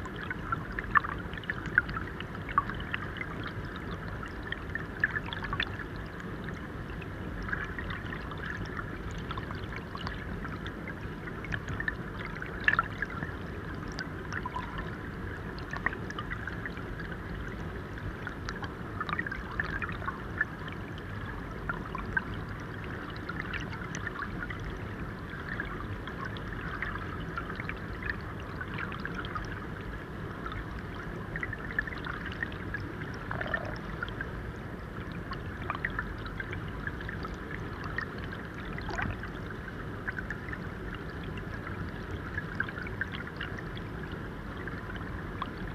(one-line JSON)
{"title": "Štadviliai, Lithuania, small dam underwater", "date": "2020-07-11 15:40:00", "description": "water falls sfom the small dam and turns the wheel of the mill. hydrophone just several metres away from the dam", "latitude": "55.73", "longitude": "26.20", "altitude": "142", "timezone": "Europe/Vilnius"}